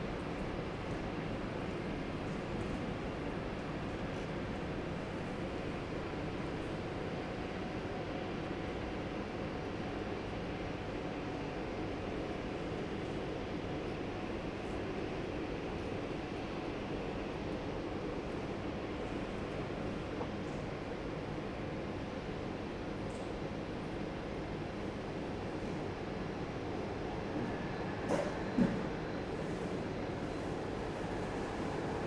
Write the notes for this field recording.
Terrace for smoker inside the new T1 terminal.